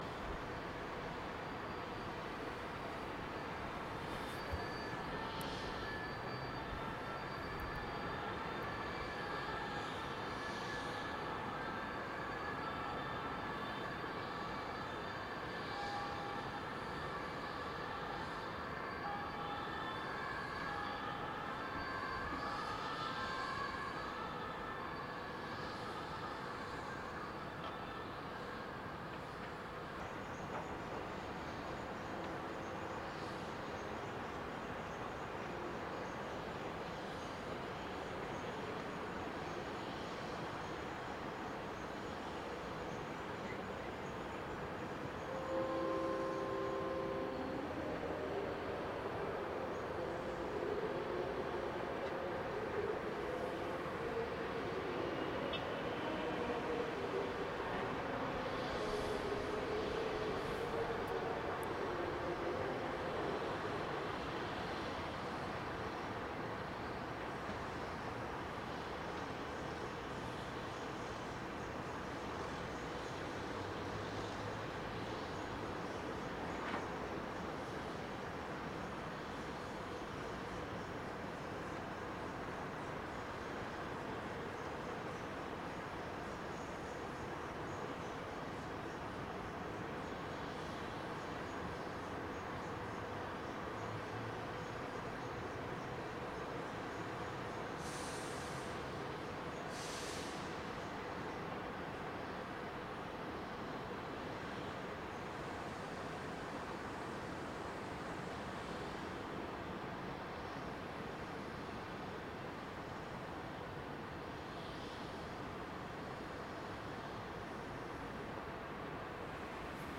stuttgart, viewing platform of main station
On the viewing platform of the railway station tower.
Stuttgart, Deutschland, 15 August 2011